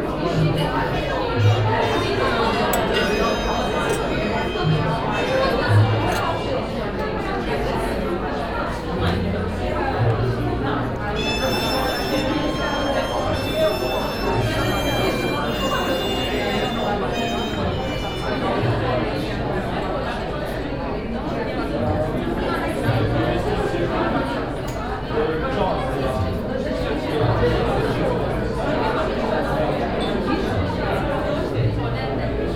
Old Town, Klausenburg, Rumänien - Cluj - Napoca - Restaurant Camino
Inside the crowded restaurant Camino on a saturday evening. The sound and atmosphere of the central cavern of the building.
soundmap Cluj- topographic field recordings and social ambiences
Cluj-Napoca, Romania